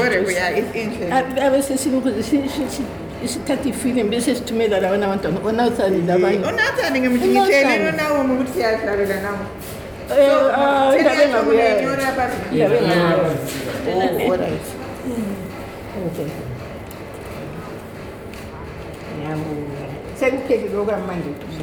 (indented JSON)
{
  "title": "Pumula, Bulawayo, Zimbabwe - Singing and shopping...",
  "date": "2013-12-21 16:45:00",
  "description": "…we are with the filmmaker Joyce Jenje Makwends and the pioneer jazz singer Lina Mattaka in a shop in Old Pumula Township…. We were just returning from a local Wedding ceremony where the Joyce and Lina had been singing in praise of bride and groom… and here they continue while shopping...\nAccording to Joyce, this song is – “Somandla thelu moya Oyingcwele” – translated into English – Almighty send the blessed atmosphere/ energy/ spirit…”",
  "latitude": "-20.14",
  "longitude": "28.48",
  "altitude": "1360",
  "timezone": "Africa/Harare"
}